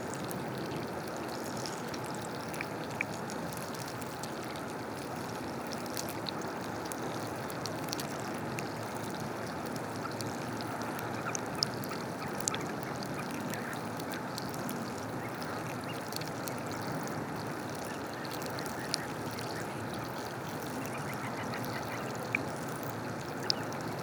La Couarde-sur-Mer, France - Winkles eating
During the low tide, recording of hundred winkles eating on the rocks. The microphones were buried beneath the algae.